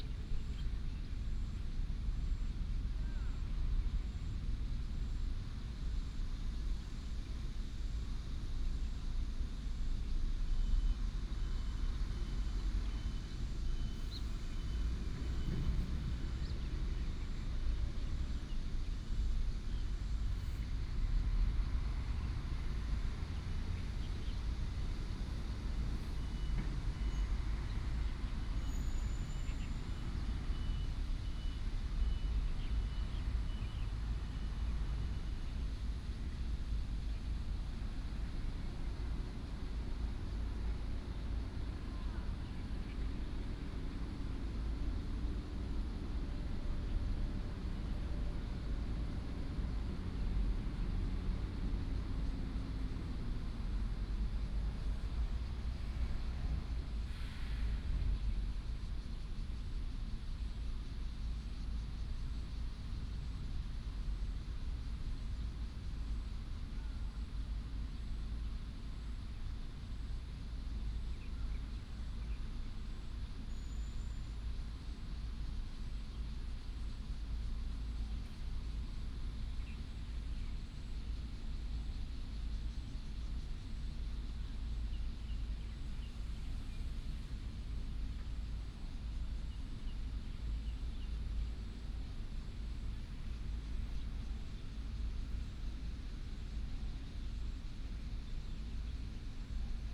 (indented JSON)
{
  "title": "煉油廠南門綠地, Taoyuan Dist. - Next to the refinery",
  "date": "2017-07-27 07:01:00",
  "description": "Next to the refinery, traffic sound, birds sound, dog",
  "latitude": "25.03",
  "longitude": "121.31",
  "altitude": "87",
  "timezone": "Asia/Taipei"
}